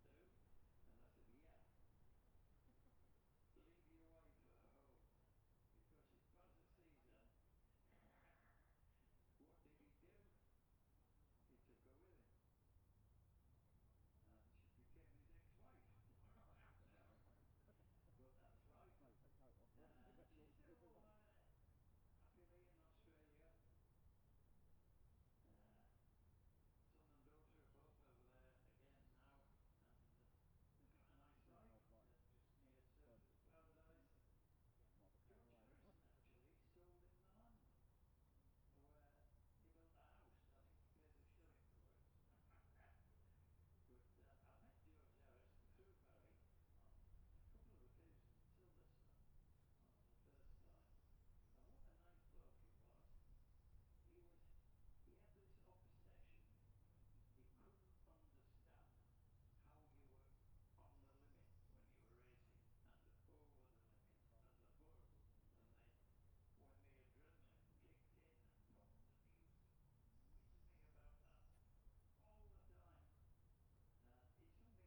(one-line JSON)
{"title": "Jacksons Ln, Scarborough, UK - olivers mount road racing 2021 ...", "date": "2021-05-22 09:55:00", "description": "bob smith spring cup ... olympus LS 14 integral mics ... running in sort of sync with the other recordings ... starts with 600cc group B and continues until twins group B practices ... an extended time edited recording ...", "latitude": "54.27", "longitude": "-0.41", "altitude": "144", "timezone": "Europe/London"}